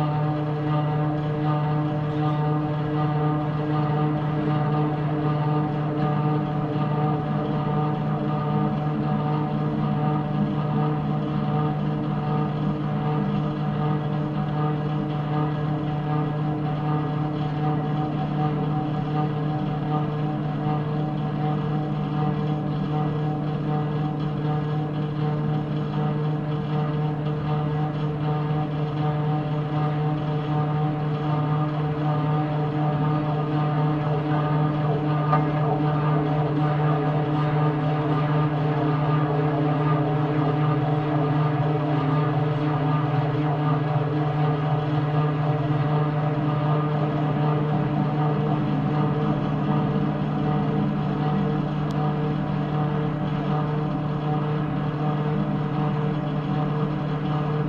mountain top tower guide wires
São Pedro do Sul, Portugal, 27 June 2008